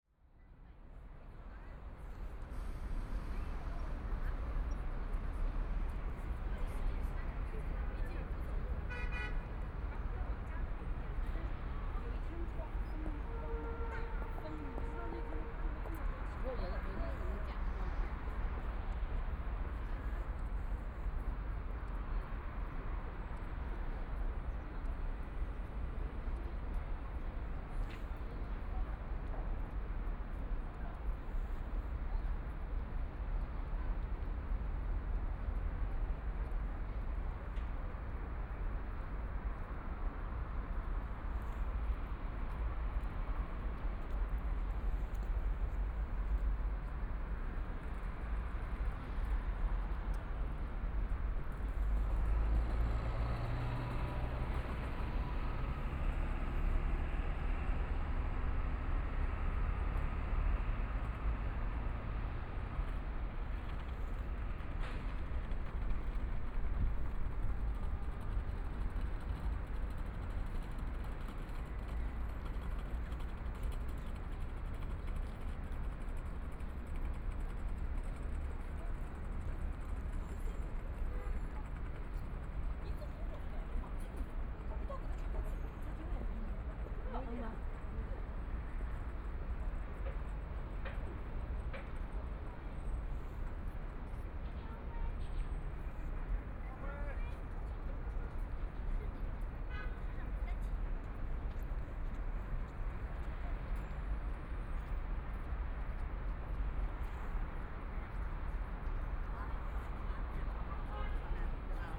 Below the vehicles on the road, Most travelers to and from the crowd, Binaural recording, Zoom H6+ Soundman OKM II